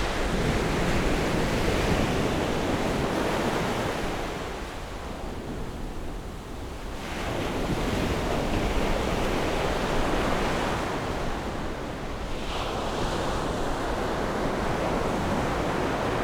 台南市南區喜南里, Taiwan - Sound of the waves
On the beach, Sound of the waves
Zoom H6 +Rode NT4
Tainan City, Taiwan, 18 February 2017